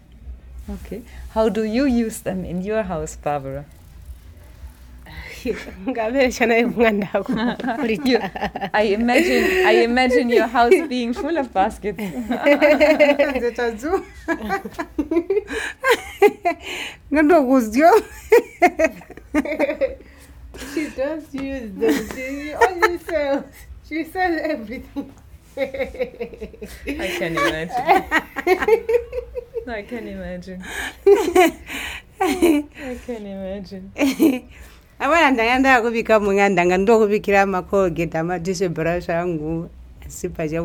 Binga Craft Centre, Binga, Zimbabwe - Barbara Mudimba - baskets for offerings, food and decor...
Traditionally, Barbara tells us, the baskets may be used for food and eating or, to give offerings to the ancestors; large, robust baskets are used for seeds in agricultural work. Today, basket weaving is appreciated as an art in its own right.
9 November 2012